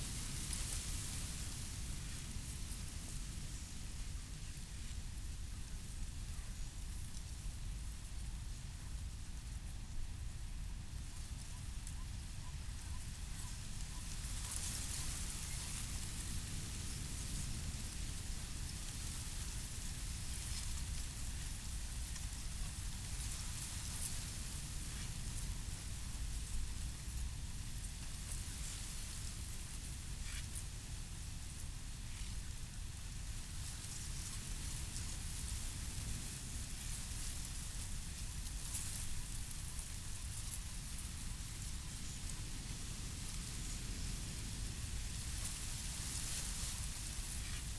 river Oder floodplain, wind in dry reed
(Sony PCM D50, DPA4060)
Letschin, Germany, 7 April